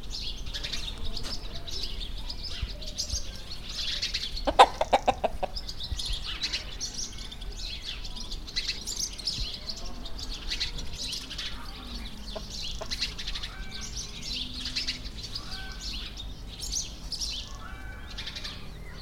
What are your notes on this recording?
Des poules se nourrissent au milieu d'une haie de cactus morts et d'un dépotoir. Son pris par Kaïs et Mina. Al dajjaj kay yaklo fil wost al drag mayit o lzbel.